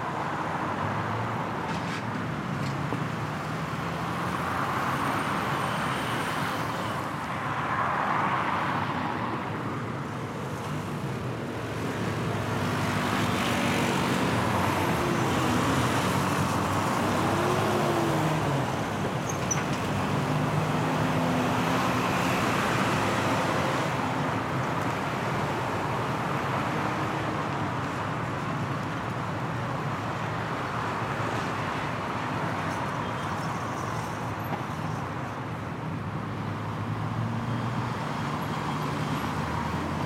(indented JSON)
{
  "title": "Av Calle, Bogotá, Colombia - Main street in North Bogota",
  "date": "2021-05-18 18:00:00",
  "description": "Main street of North Bogotá, this place has a busy environment, it's a road where cars, motorcycles, buses and people who pass by. You can hear the engines, braking, whistling of each passing vehicle, you can also feel how they pass in different directions. Recorded at 6pm with a zoom h8 recorder with stereo microphone, xy technique.",
  "latitude": "4.70",
  "longitude": "-74.04",
  "altitude": "2555",
  "timezone": "America/Bogota"
}